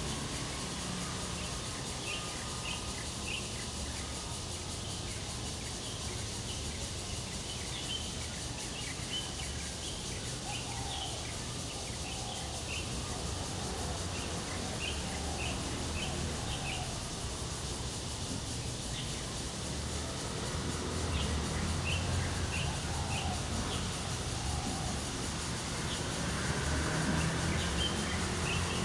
in the Park, Cicadas cry, Bird calls, Traffic Sound
Zoom H2n MS+XY